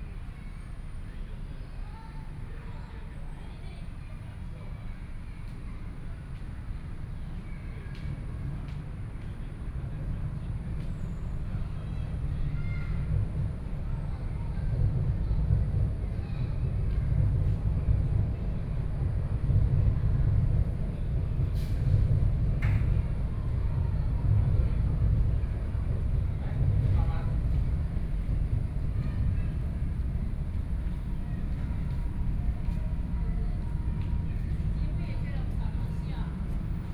Beitou, Taipei - MRT train
MRT trains through, Sony PCM D50 + Soundman OKM II
Beitou District, Taipei City, Taiwan, 30 September 2013, 19:33